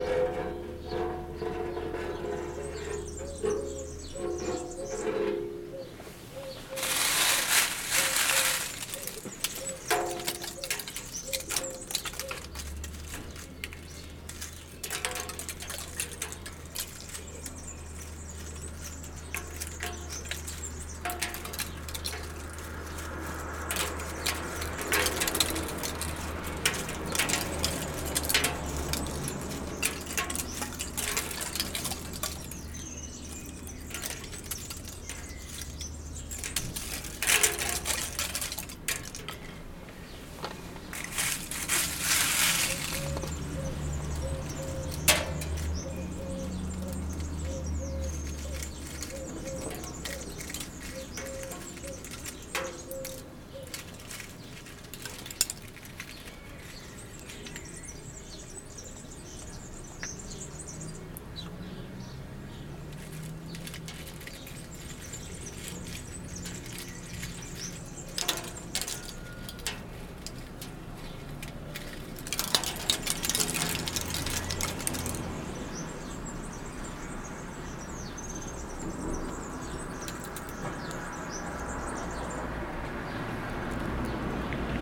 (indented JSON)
{
  "title": "Via Porta della Croce, Serra De Conti AN, Italia - Wheelbarrow Resonance",
  "date": "2018-05-26 15:30:00",
  "description": "You can hear nice sounds obtained by falling leaves on a wheelbarrow and the results are quite interesting.\n(binaural: DPA into ZOOM H6)",
  "latitude": "43.54",
  "longitude": "13.04",
  "altitude": "208",
  "timezone": "Europe/Rome"
}